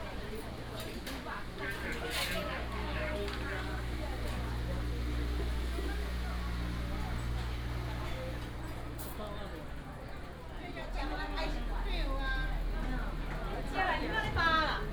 Huarong Market, Shilin Dist., Taipei City - Walking through the market
Walking through the market